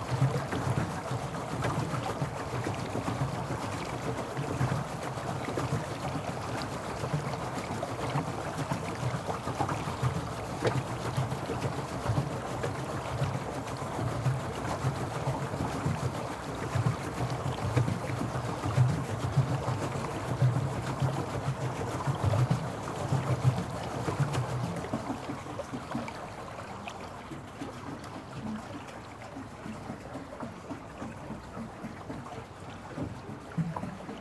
Rummelsburger See, Berlin, Deutschland - Tretboot
ride on a pedal boat, on the river Spree.
(Sony PCM D50 120°)
Berlin, Germany, 10 June 2012, 12:40